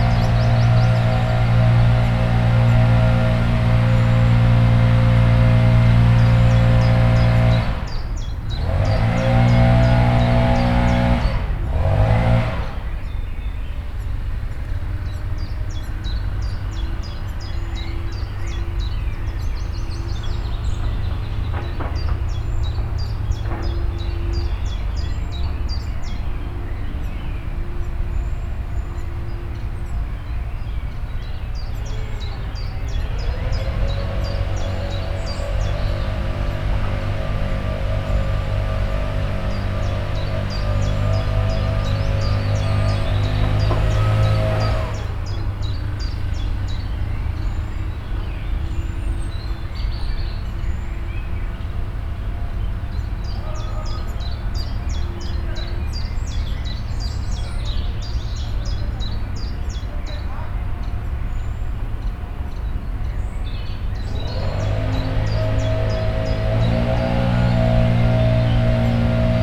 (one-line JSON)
{"title": "Geinegge, Hamm, Germany - borderline mix at the Geinegge", "date": "2015-05-08 14:55:00", "description": "i’m sitting on a bench right at a local stream know as “Geinegge”; it’s a small strip of land along the stream, in parts even like a valley, re-invented as a kind of nature reserve… immediately behind me begins a seizable industrial area… listening to the seasonal mix the borderline creates...", "latitude": "51.70", "longitude": "7.78", "altitude": "63", "timezone": "Europe/Berlin"}